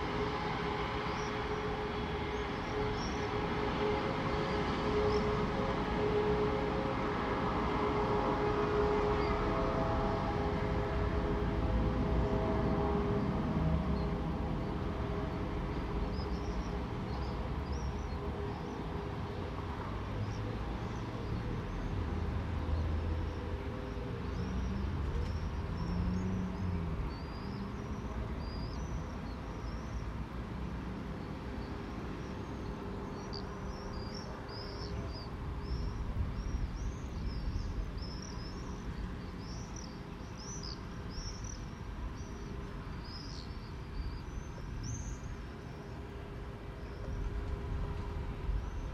Halesworth market town; sounds of summer through the attic skylight - Roosting rooks flock high above and swifts scream as dusk falls
The darkening evening. Rooks fly back to their roost in flocks of hundreds, maybe thousands. The town gradually quietens after a unusually hummy vehicle (maybe agricultural) passes slowly into the distance.